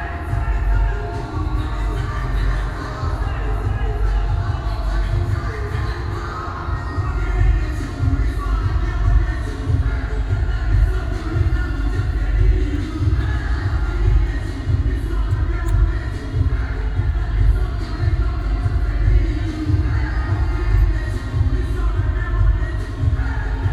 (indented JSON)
{
  "title": "Zhongzheng Dist., Taipei City - Mix",
  "date": "2013-10-10 11:57:00",
  "description": "The sound of the nearby protest gatherings, Cries of protest, Birdsong, Binaural recordings, Sony PCM D50 + Soundman OKM II",
  "latitude": "25.04",
  "longitude": "121.52",
  "altitude": "17",
  "timezone": "Asia/Taipei"
}